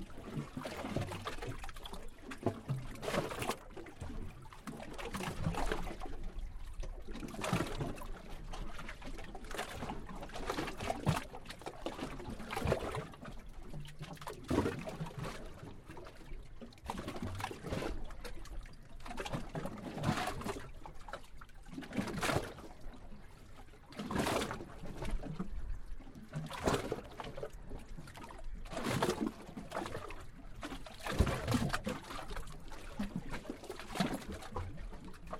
Kuopio, Suomi, Matkustajasatama - The waves hit the bay of Kuopio (Sataman laitureihin iskeytyvät korkeat aallot)
Recorder this moment in the middle of June, as the waves hit the Pier at the harbour of Kuopio
Zoom H4n in hand.
Kuopio, Finland, 15 June